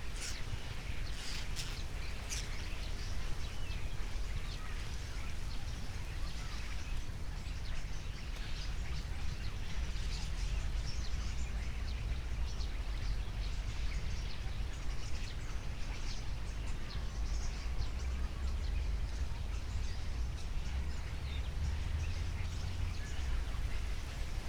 a bunch of starlings arrived at the poplars
(SD702, 2xuNT1)
Berlin, Germany, August 2014